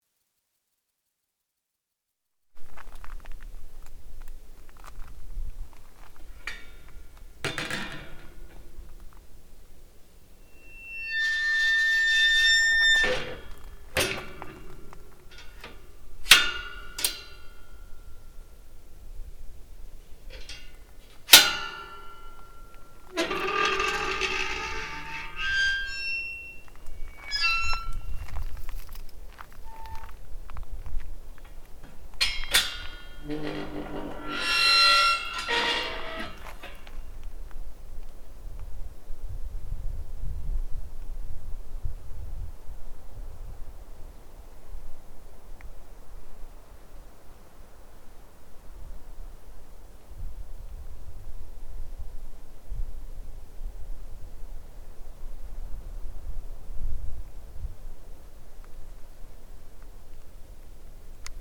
Gatter, GR 221
Gatter, Öffnen, Schließen, Wandern
17 July 2011